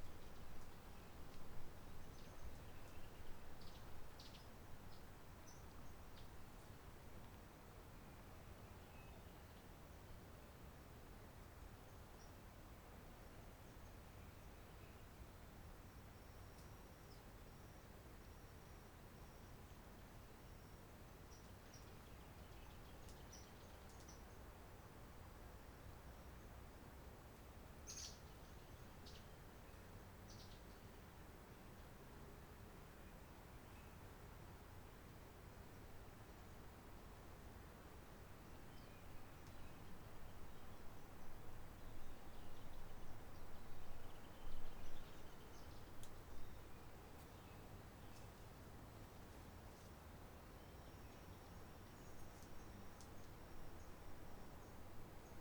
Forêt Roche Merveilleuse, Réunion - 20181120 11h34 lg78rvsa20 ambiance sonore Forêt Matarum CILAOS
INDEX
00:00:00 11h34 à lg78rvsa2084
00:15:12 z'oiseaux verts
00:17:35 passage touristes et plus de oiseaux blancs et verts.
00:18:26 début bruit hélicocoptère de type B4 fin 00:20:00
arrêt relatif des oiseaux.
00:22:12 merle et oiseaux-verts
00:22:58 hélicoptère de type écureuil
00:24:25 fin hélico
arrêt relatif des oiseaux.
00:27:40 reprise oiseaux
00:28:40 peu d'oiseaux
00:31:30 touristes, peu d'oiseaux
20 November, 11:34